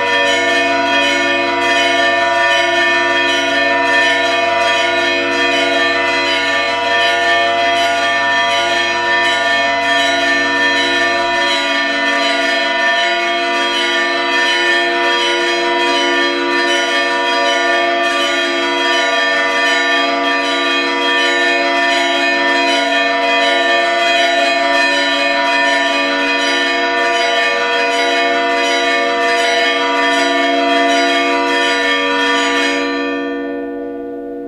Alghero Sassari, Italy - Belfry of the Cathedral
I had made a number of attempts to record these church bells from our hotel room window but either didn't have my minidisc ready or just missed completely. I took a few days before we realized that they were ringing at 7:40 PM every evening. Kind of a strange time but I finally made the recording.
8 August 2005, 01:05